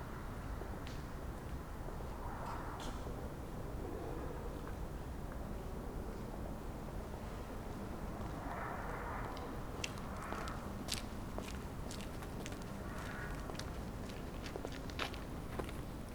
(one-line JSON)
{"title": "Berlin: Vermessungspunkt Maybachufer / Bürknerstraße - Klangvermessung Kreuzkölln ::: 25.04.2012 ::: 01:50", "date": "2012-04-25 01:50:00", "latitude": "52.49", "longitude": "13.43", "altitude": "39", "timezone": "Europe/Berlin"}